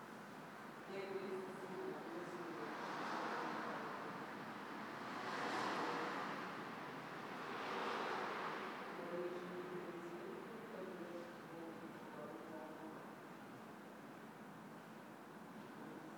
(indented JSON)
{
  "title": "sechshauser straße, fünfhaus, vienna - yard",
  "date": "2014-03-01 19:36:00",
  "latitude": "48.19",
  "longitude": "16.33",
  "timezone": "Europe/Vienna"
}